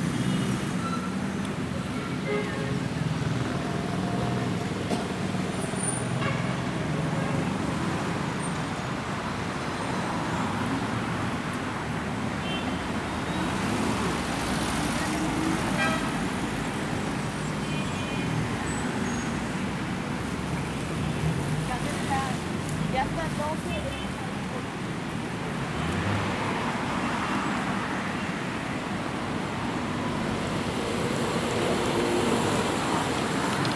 Traveling across the principal avenue of Miraflores Lima Perú